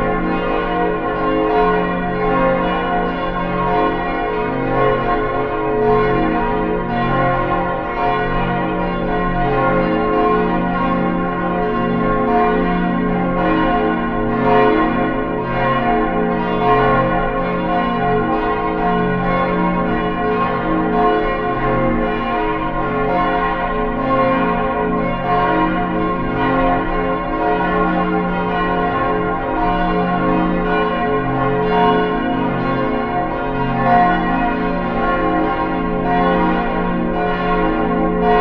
Backyard, Mannheim, Deutschland - Churchbells
Sound of the Bells of the Jesuit Church recorded in a backyard close by. Recorded with a Sound Devices 702 field recorder and a modified Crown - SASS setup incorporating two Sennheiser mkh 20 microphones.